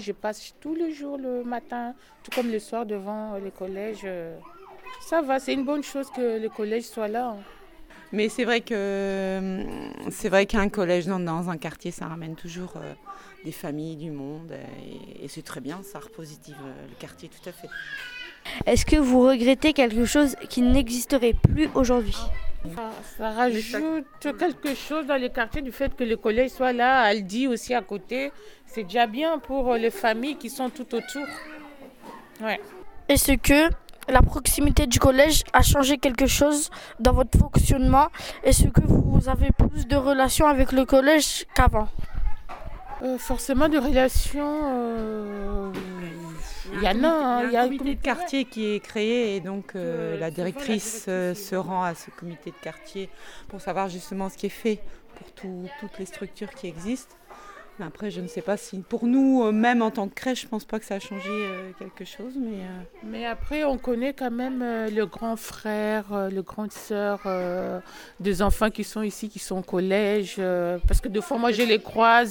Rue dOran, Roubaix, France - Crèche La Luciole
Interview d'Ingrid et Mireille, animatrices
7 May 2019, 11:41